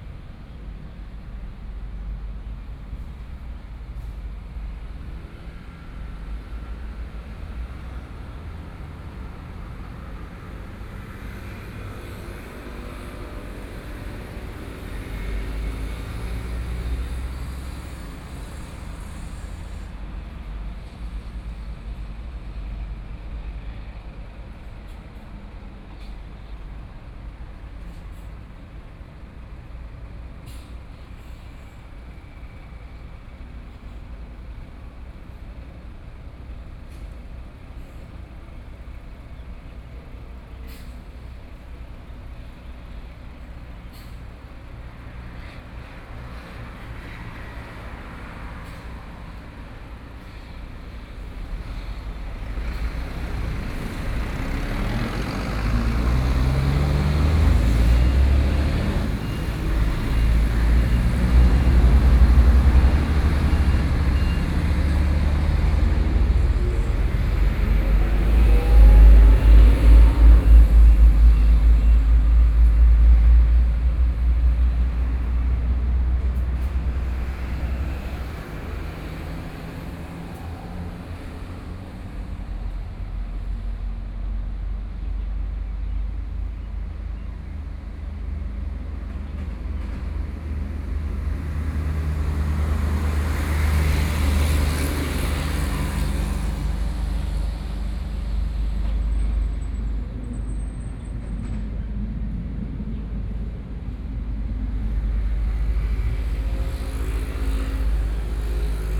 Zhongshan Bridge, Hualien City - The traffic sounds
Leading to the port, There are a lot of big trucks appear, Sony PCM D50 + Soundman OKM II
Hualian City, Hualien County, Taiwan, 5 November 2013, 14:27